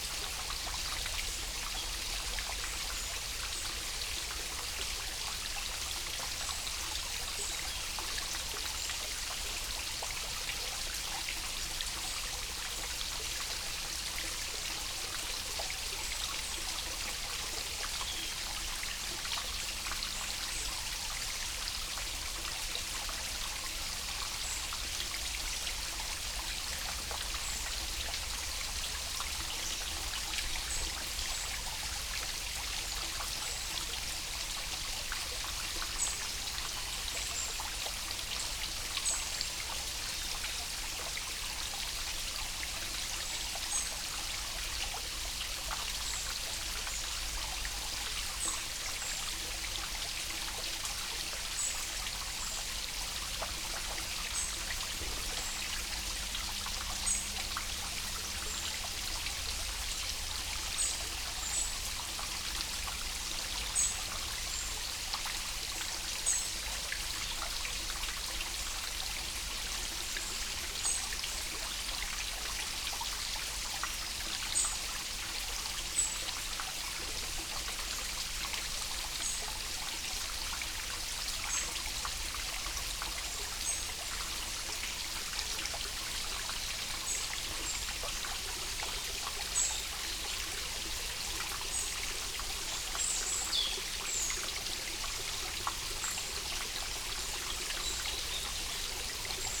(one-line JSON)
{"title": "Šmihel, Šempas, Slovenia - A tributary of a stream Lijak", "date": "2020-10-25 08:18:00", "description": "The Lijak stream comes to light as a karst spring from under a steep funnel wall at the foot of the Trnovski gozd.\nRecorded with Jecklin disk and Lom Uši Pro microphones with Sound Devices MixPre-3 II recorder. Best with headphones.", "latitude": "45.96", "longitude": "13.72", "altitude": "81", "timezone": "Europe/Ljubljana"}